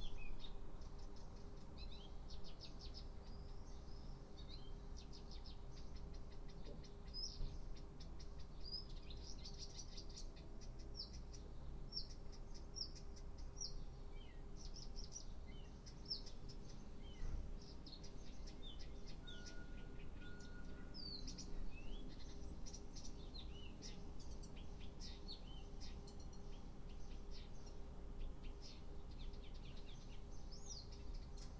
Birds are singing in the street. There is a construction site not far from the place, and the sound signals made by trucks can be heard.